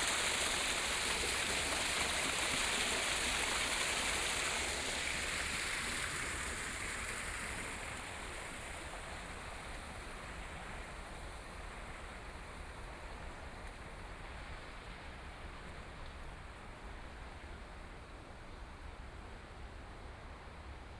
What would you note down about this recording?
Walking along the river side, Walking from upstream to downstream direction, Binaural recording, Zoom H6+ Soundman OKM II